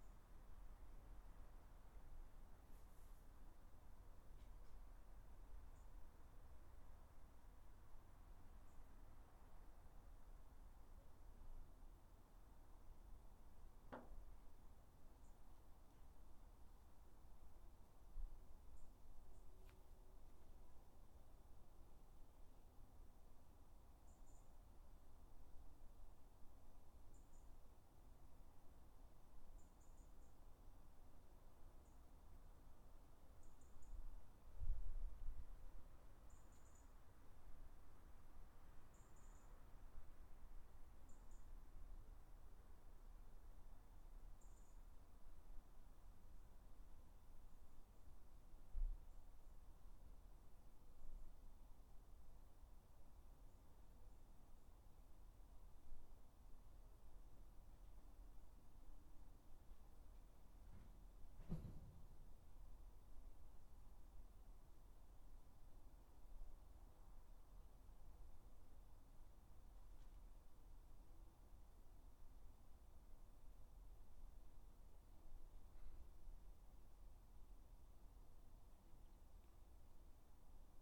{"title": "Dorridge, West Midlands, UK - Garden 7", "date": "2013-08-13 09:00:00", "description": "3 minute recording of my back garden recorded on a Yamaha Pocketrak", "latitude": "52.38", "longitude": "-1.76", "altitude": "129", "timezone": "Europe/London"}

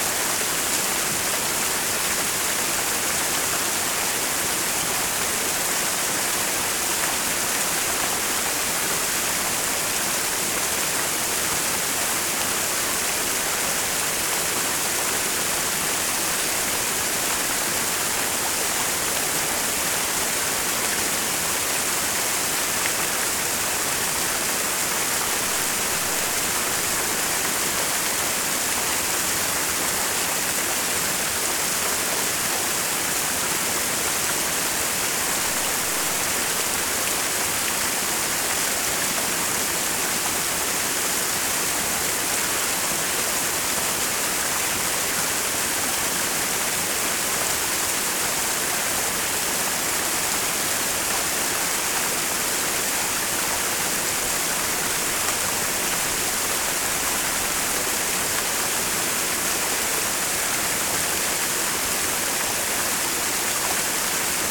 {"title": "pohorje waterfall from above - pohorje waterfall from the base", "date": "2011-11-21 13:04:00", "description": "the waterfall recorded from another small bridge crossing directly under its base", "latitude": "46.50", "longitude": "15.56", "altitude": "1039", "timezone": "Europe/Ljubljana"}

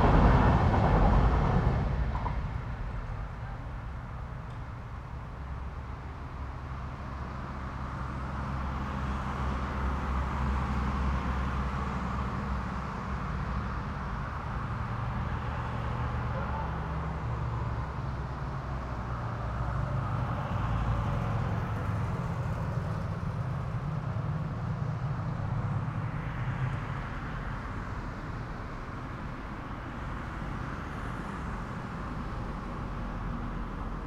{"title": "Köln, Richard-Wagner-Str. - space between", "date": "2010-10-10 14:00:00", "description": "interesting place, kind of these unused spaces inbetween other structures. different kind of traffic sounds", "latitude": "50.94", "longitude": "6.93", "altitude": "53", "timezone": "Europe/Berlin"}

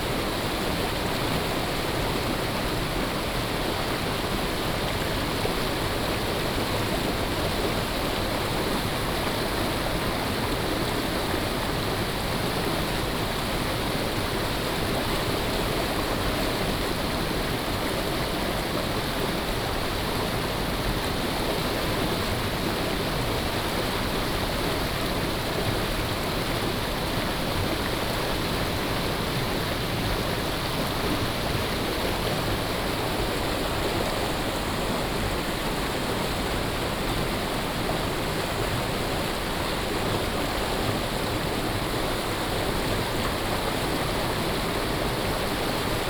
{"title": "種瓜坑溪, 埔里鎮成功里 - Stream", "date": "2016-04-19 15:52:00", "description": "Stream sound\nBinaural recordings\nSony PCM D100+ Soundman OKM II", "latitude": "23.96", "longitude": "120.89", "altitude": "428", "timezone": "Asia/Taipei"}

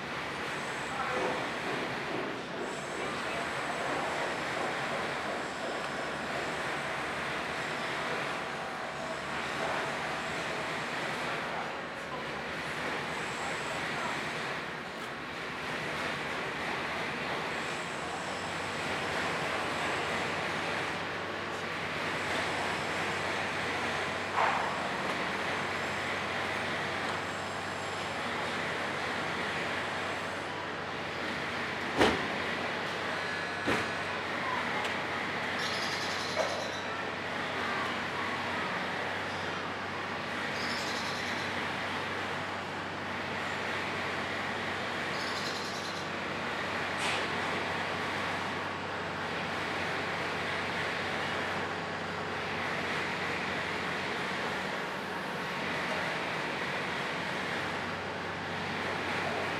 {"title": "L'Aquila, Piazza Regina Margherita - 2017-05-29 11-Pzza Regina Margherita", "date": "2017-05-29 15:50:00", "latitude": "42.35", "longitude": "13.40", "altitude": "736", "timezone": "Europe/Rome"}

